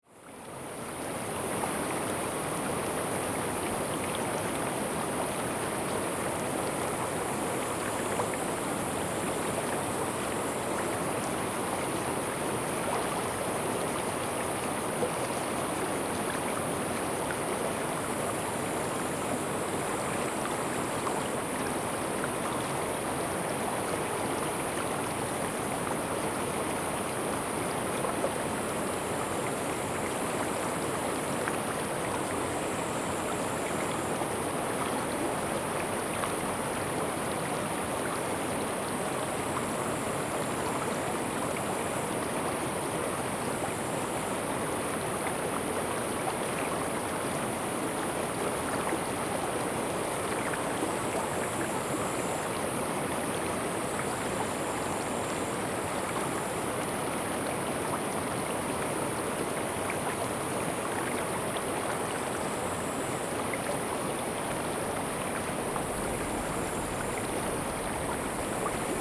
池南村, Shoufeng Township - Brook sound
Brook sound, Very Hot weather, Standing water in the middle position
Zoom H2n MS+XY